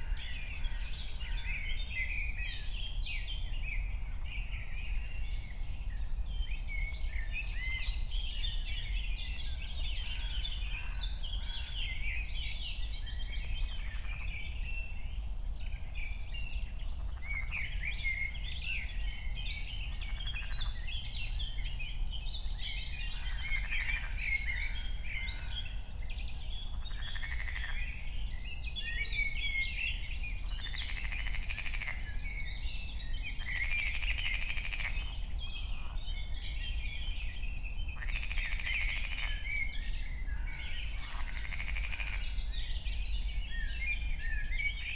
6:00 drone, cars, s-bahn trains, frogs, more birds, some bathing